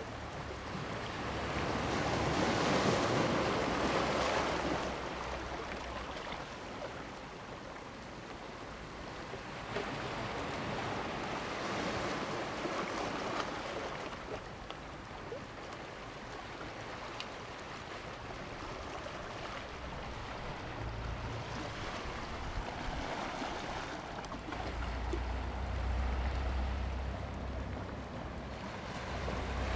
Jizatay, Ponso no Tao - Waves and tides
Small pier, Sound of the waves
Zoom H2n MS +XY